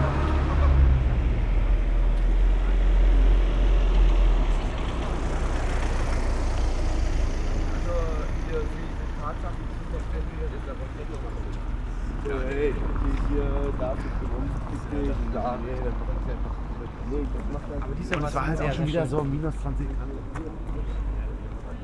Berlin: Vermessungspunkt Maybachufer / Bürknerstraße - Klangvermessung Kreuzkölln ::: 11.05.2013 ::: 02:52
Berlin, Germany, 11 May